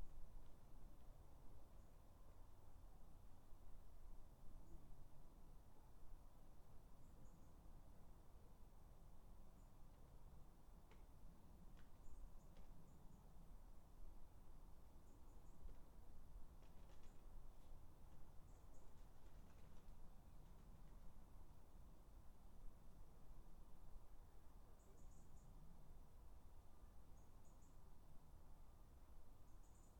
3 minute recording of my back garden recorded on a Yamaha Pocketrak
Solihull, UK, 13 August 2013, 08:00